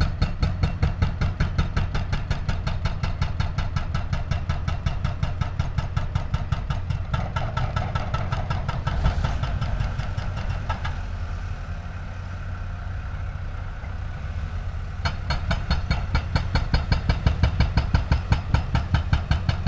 demolition of a logistics company, excavator with mounted jackhammer demolishes building elements
april 29, 2014